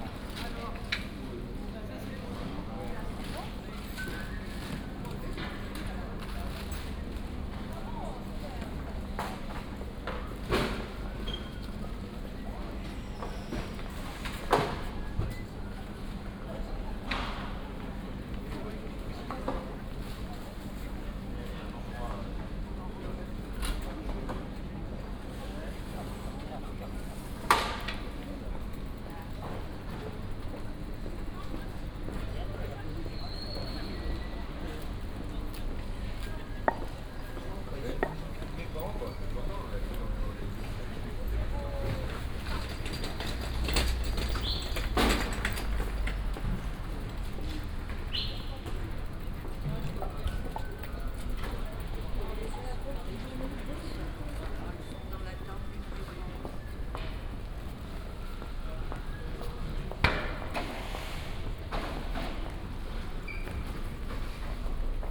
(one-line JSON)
{"title": "place Richelme, Aix-en-Provence, Fr. - market ambience", "date": "2014-01-09 08:40:00", "description": "Place Richelme, food market setup, walk around the market\n(Sony PCM D50, OKM2)", "latitude": "43.53", "longitude": "5.45", "altitude": "207", "timezone": "Europe/Paris"}